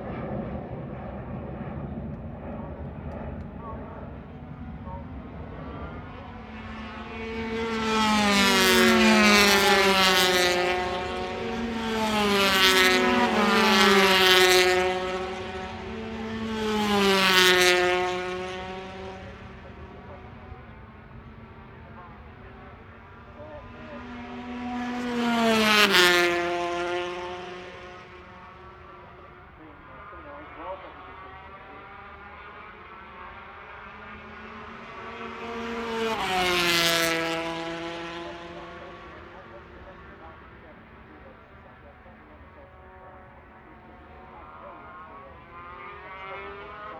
Unnamed Road, Derby, UK - British Motorcycle Grand Prix 2004 ... 125 Qualifying ...
British Motorcycle Grand Prix 2004 ... 125 Qualifying ... one point stereo mic to minidisk ... date correct ... time optional ...